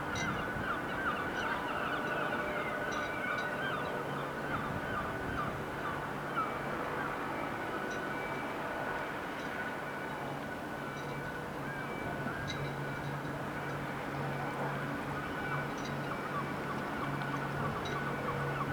South Quay, Douglas, Isle of Man - Douglas Harbour - Far End of South Quay
Seagulls, crows, a couple of small fishing boats creating small swell against harbour wall, clinking of rigging against masts of a few small yachts, distant chatter, car passing behind, hedge trimmer.
Recorded on a Roland R-26 using the inbuilt Omni and X-Y microphones. 10/08/2021
Middle, Isle of Man, 10 August, 15:17